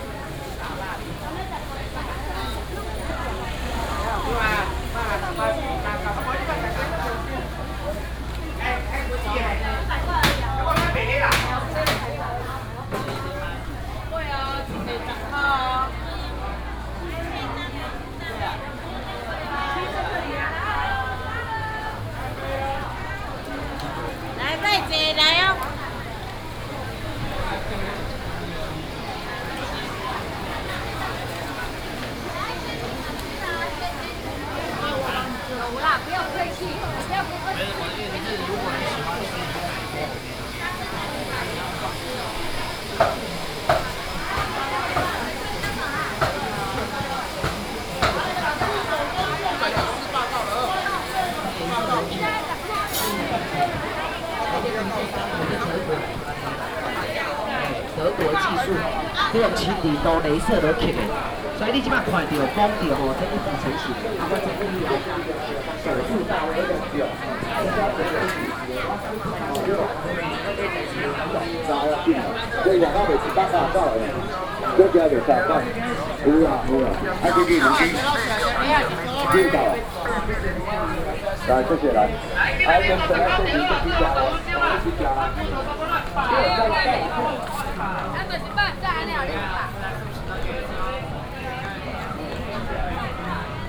traditional market, traffic sound, vendors peddling, Binaural recordings, Sony PCM D100+ Soundman OKM II